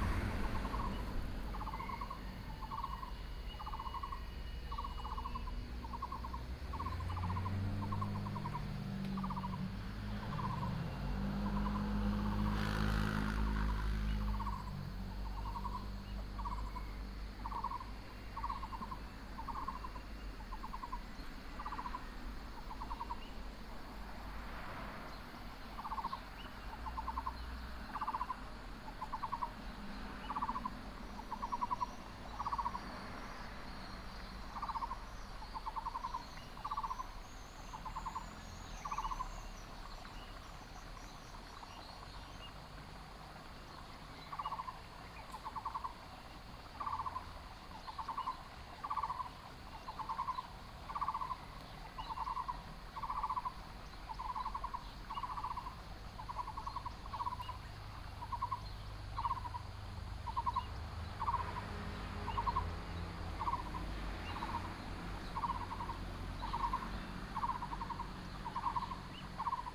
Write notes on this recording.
Beside the fruit farmland, A variety of birds call, Chicken cry, stream, Traffic sound